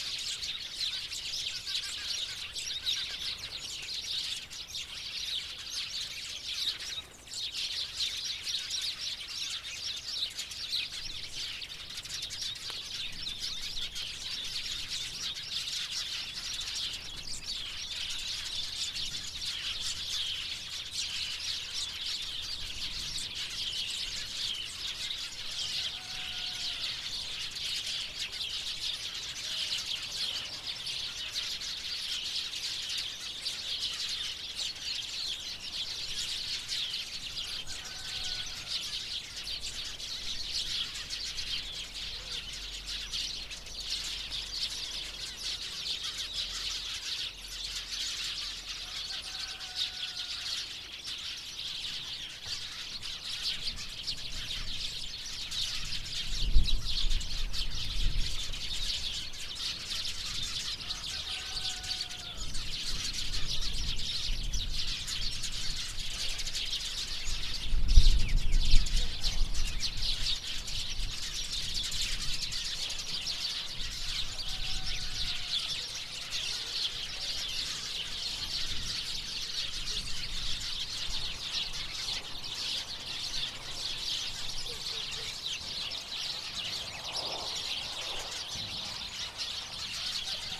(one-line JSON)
{"title": "Αντίγονος, Ελλάδα - Sonic enviroment", "date": "2021-10-15 23:45:00", "description": "Record by: Alexandros Hadjitimotheou", "latitude": "40.64", "longitude": "21.76", "altitude": "564", "timezone": "Europe/Athens"}